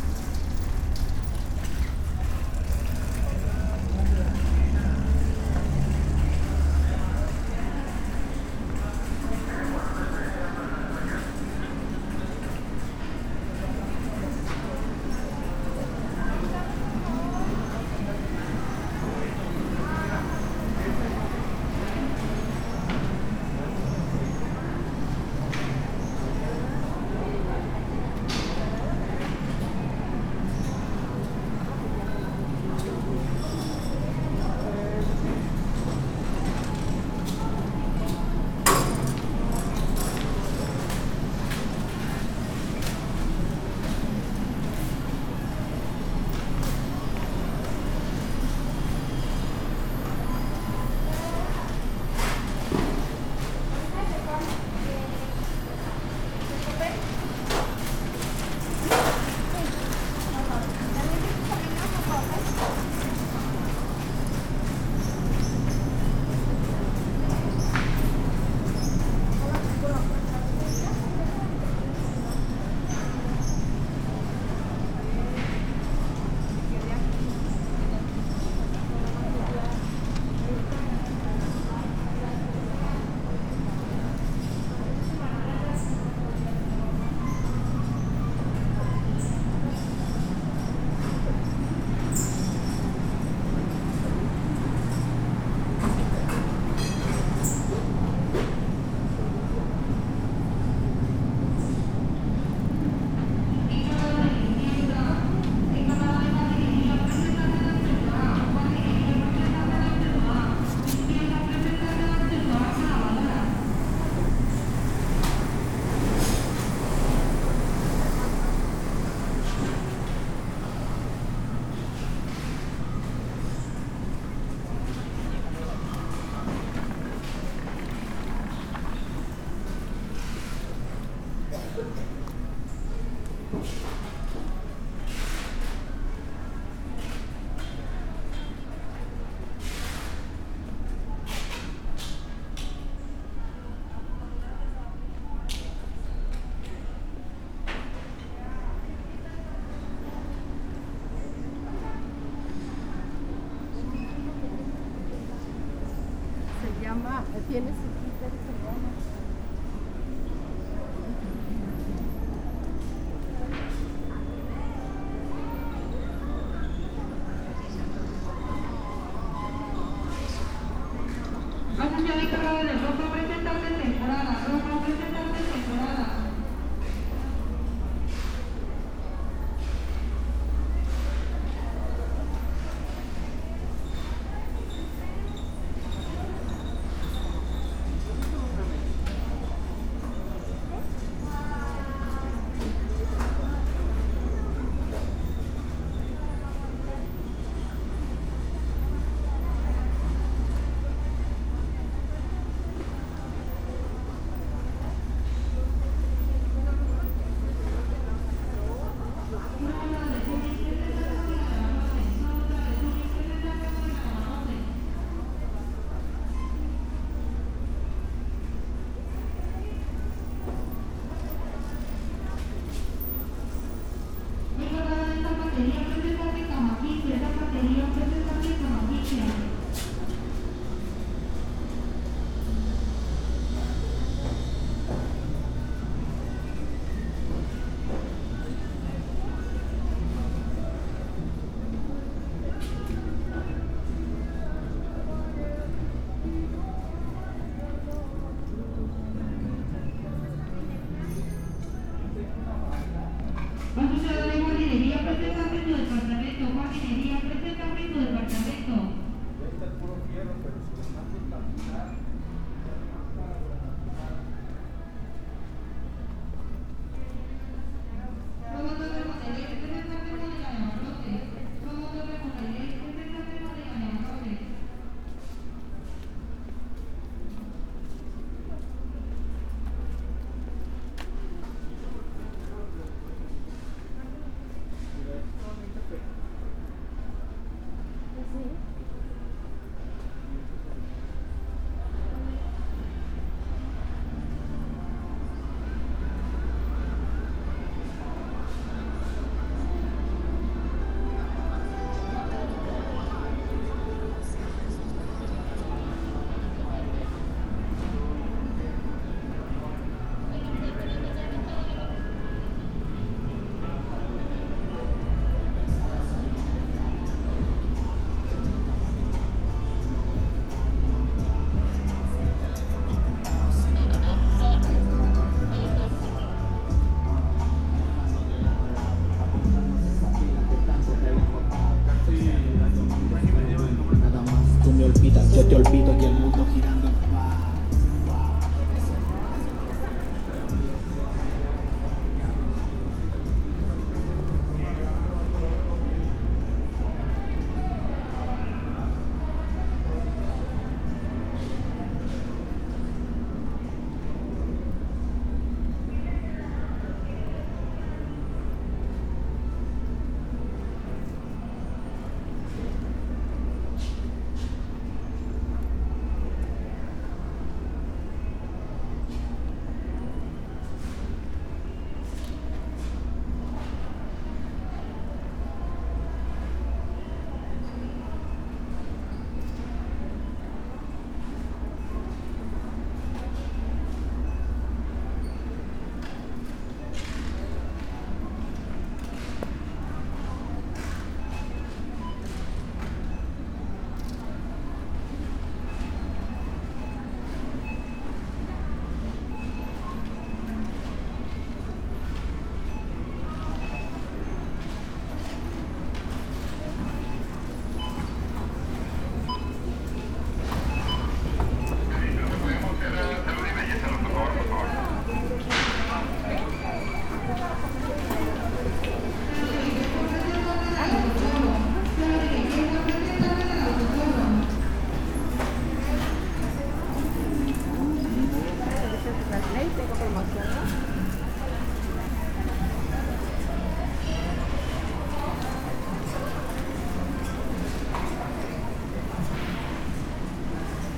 Got into Walmart from the parking and walked around inside.
I made this recording on july 15th, 2022, at 12:59 p.m.
I used a Tascam DR-05X with its built-in microphones and a Tascam WS-11 windshield.
Original Recording:
Type: Stereo
Entrando a Walmart desde el estacionamiento y caminando adentro.
Esta grabación la hice el 15 de julio 2022 a las 12:59 horas.
Guanajuato, México